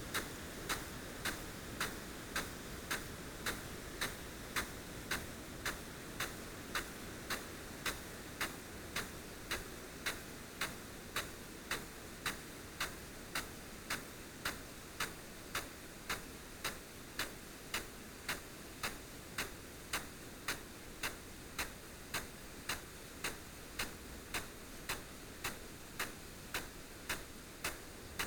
field irrigation system ... parabolic ... Bauer SR 140 ultra sprinkler to Bauer Rainstar E irrigation unit ... standing next to the sprinkler ... as you do ...